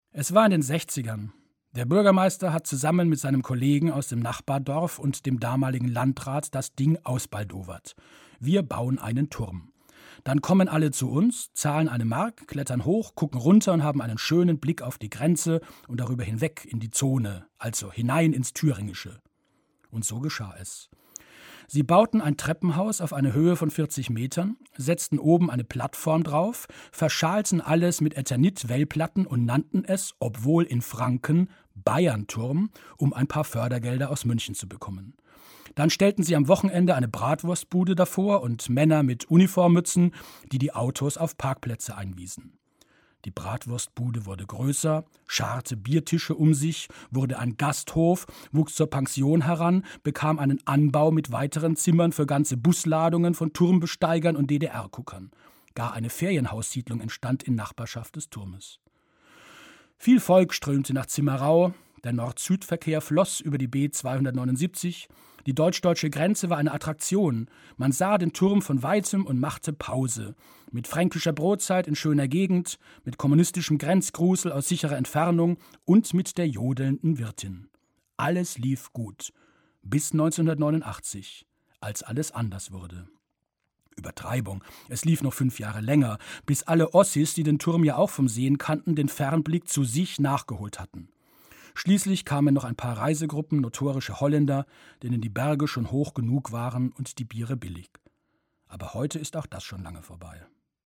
Produktion: Deutschlandradio Kultur/Norddeutscher Rundfunk 2009